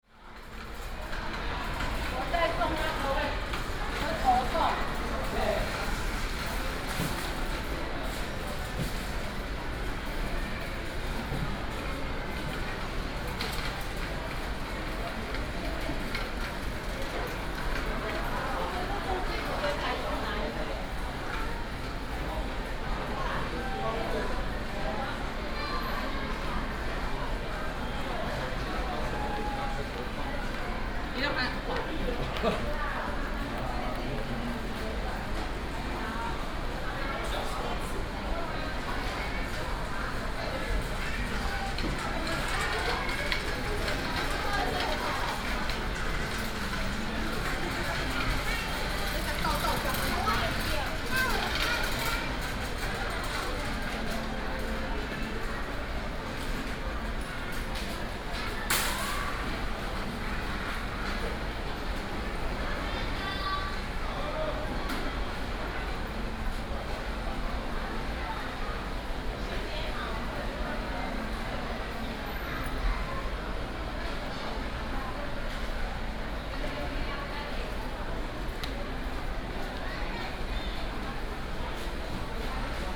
家樂福內壢店, Taoyuan City - In the store checkout exit area
In the store checkout exit area, trolley
Taoyuan City, Taiwan, 8 July 2017